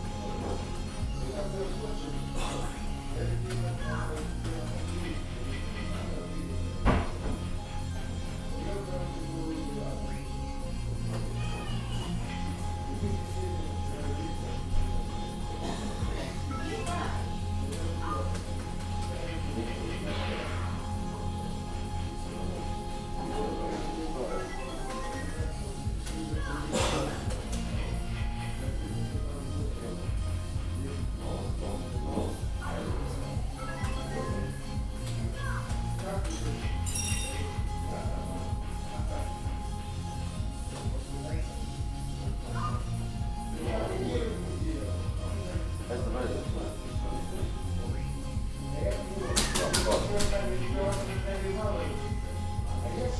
Tallinn Kopli station bar
coffee break in bar at kopli station.
Tallinn, Estonia